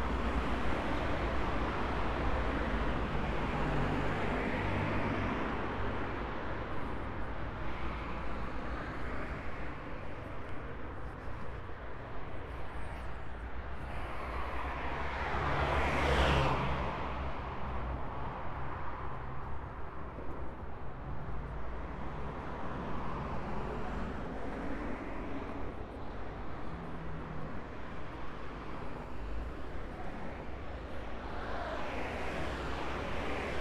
Rijeka, Croatia, Night Of Museums - Night of Museums - Sound Walk 1
Night of Museums 2017 Rijeka
27 January 2017